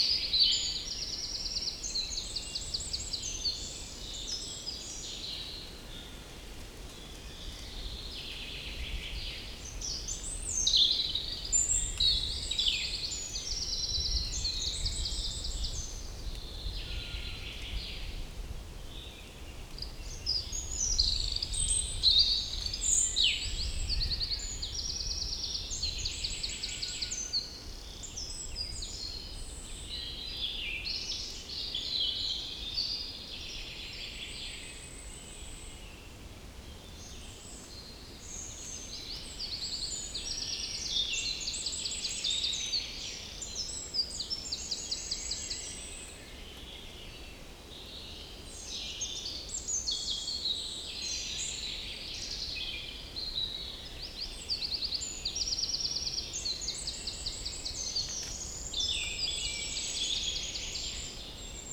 Unnamed Road, Šempas, Slovenia - Trnovo forest - Krnica Lokve
Birds singing in the forest.
Recorded with Sounddevices MixPre3 II and LOM Uši Pro.